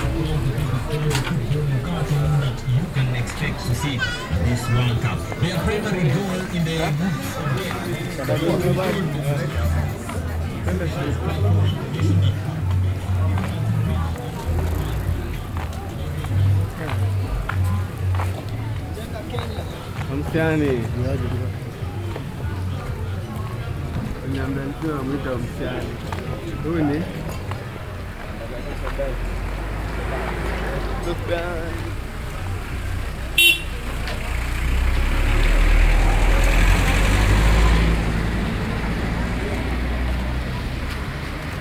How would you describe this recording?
We had been visiting “Jah Army”, a local youths group in Kibera with Ras Jahil from Pamoja FM, and Gas Fyatu from Rhyme FM; walking back now through narrow alley-ways and along market stalls towards Pamaja studio….